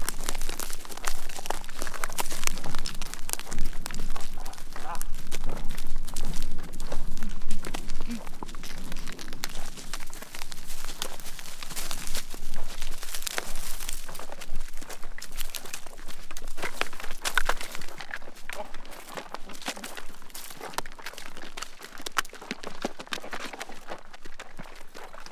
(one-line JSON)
{"title": "desert north of Nablus", "date": "2007-10-28 12:13:00", "description": "project trans4m orchestra", "latitude": "32.24", "longitude": "35.37", "altitude": "111", "timezone": "Europe/Berlin"}